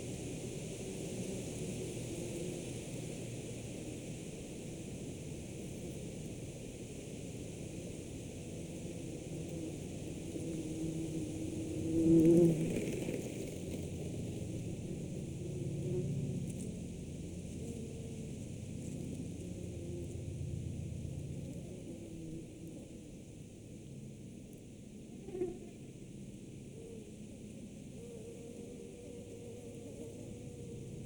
Wesps in Václavice, Czechia - vosy se krmí na spadaných hruškách
Vosy na hruškách a vítr ve větvích hrušní. (Součást festivalu Ars Poetica 2022)